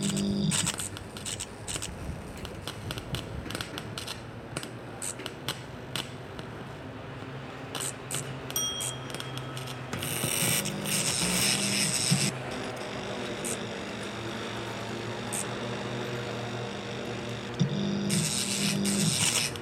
Grachtengordel-West, Amsterdam, Niederlande - Amsterdam - Amsterdam Light Festival, 'This is it, be here now' by Rudi Stern
Amsterdam - Amsterdam Light Festival, 'This is it, be here now' by Rudi Stern.
[Hi-MD-recorder Sony MZ-NH900, Beyerdynamic MCE 82]
Amsterdam, Netherlands